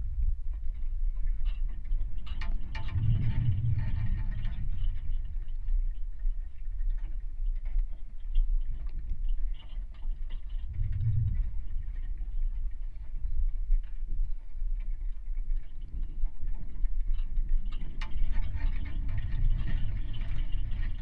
Sirutėnai, Lithuania, fence at abandoned cemetery
Abandoned cemetery and some ruins of a chappel on a hill. Contact misc on a fence.
Utenos apskritis, Lietuva, 2 March, ~13:00